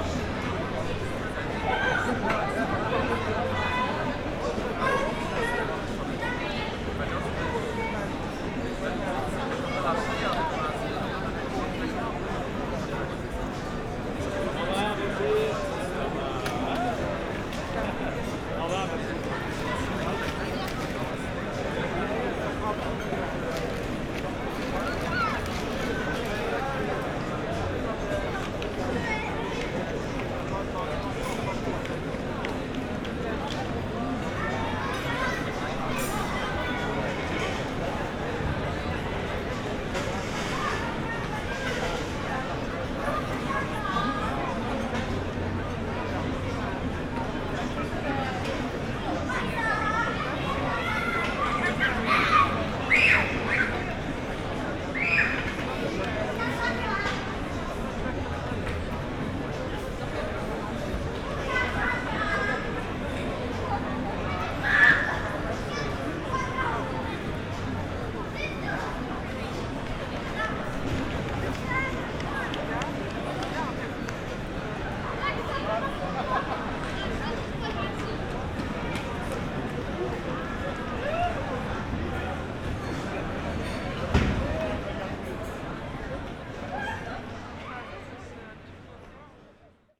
Place d'Armes, Uewerstad, Luxembourg - murmur of voices
murmur of many voices, from restaurants and cafes, heard on Place d'Armes.
(Olympus LS5, Primo EM172)